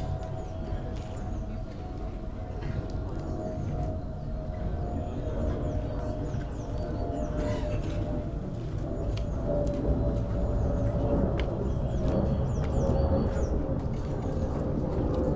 this place near the lake has seen many Sunday tourists and trippers during the last 100 years, now it's almost abandoned, only a small kiosk is left, though many people have a rest here, on this sunny early spring afternoon.
(SD702, DPA4060)
Berlin, Germany, March 2014